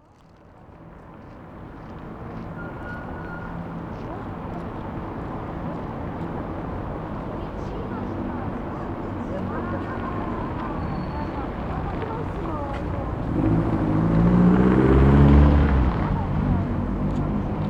Berlin: Vermessungspunkt Friedel- / Pflügerstraße - Klangvermessung Kreuzkölln ::: 28.01.2011 ::: 17:06
Berlin, Germany